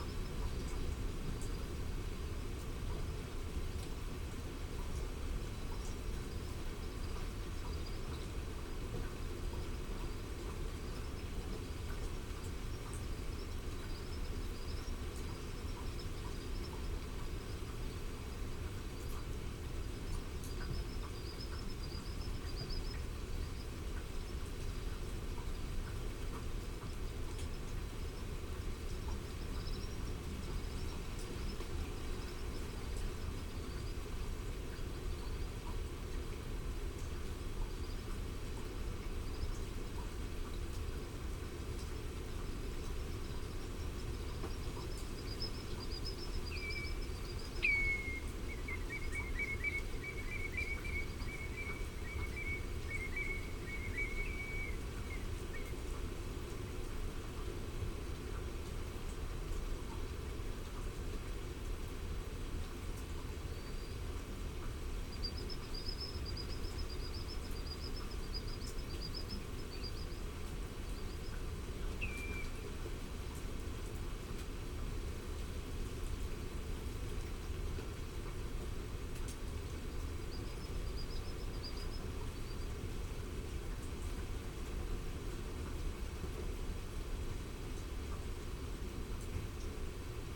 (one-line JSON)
{"title": "Argyll and Bute, UK - Lochan soundscape ...", "date": "2009-04-29 05:30:00", "description": "Dervaig ... lochan soundscape ... bird calls ... mallard ... greylag ... grey heron ... curlew ... redshank ... oystercatcher ... common sandpiper ... also curlew and redshank in cop ... wet and windy ... parabolic to Sony minidisk ...", "latitude": "56.58", "longitude": "-6.19", "altitude": "3", "timezone": "Europe/London"}